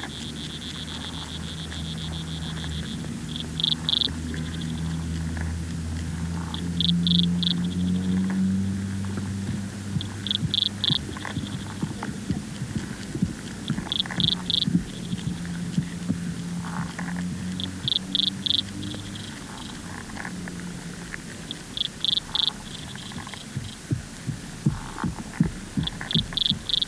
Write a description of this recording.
Recorded with an Aquarian Audio H2a hydrophone and a Sound Devices MixPre-3